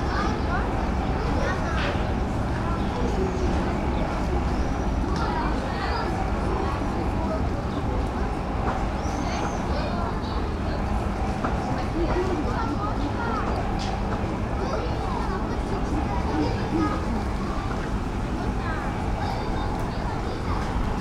Ptuj, Slovenia - overlooking ptuj
overlooking the town of ptuj from the hilltop castle. ptuj seems to be under construction in every direction - you can hear hammers and bulldozers from all over town.